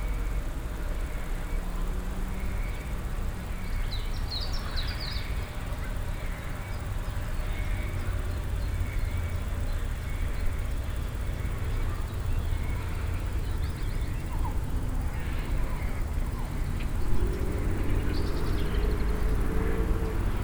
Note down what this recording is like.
in the morning time, the crickets in the nearby grass area and the constant traffic here esp. motorbikes in the distant, soundmap d - social ambiences and topographic field recordings